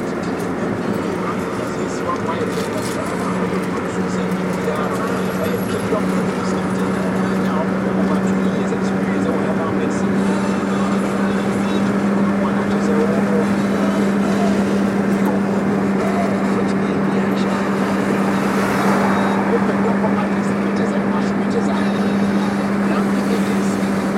Airport City, Accra, Ghana - In a taxi
This was recorded using a Sony PCM M-10 while in traffic near the Accra Airport. I changed the mic sensitivity halfway through, so edited the audio a little post-recording to make it even. Towards the end you can hear a announcement from the Ministry of Health cautioning listeners about Ebola. First time aporee map poster.
27 August 2014